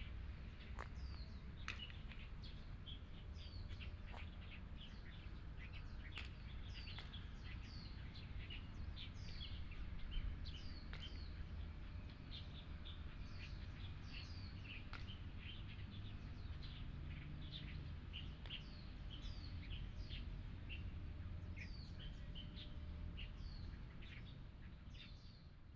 Under the big banyan tree, Baseball sound, The sound of birds, Binaural recordings, Sony PCM D100+ Soundman OKM II
15 September 2017, 14:58